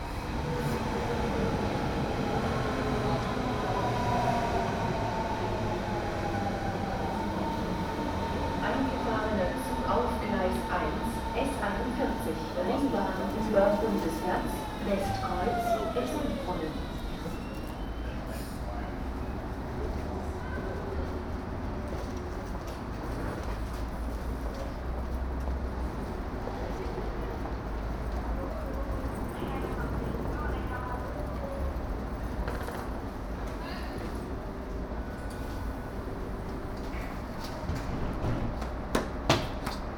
Innsbrucker Platz, Berlin, Deutschland - Innsbrucker platz S-Bahn Station
For my multi-channel work "Ringspiel", a sound piece about the Ringbahn in Berlin in 2012, I recorded all Ringbahn stations with a Soundfield Mic. What you hear is the station innsbruckerplatz in the afternoon in June 2012.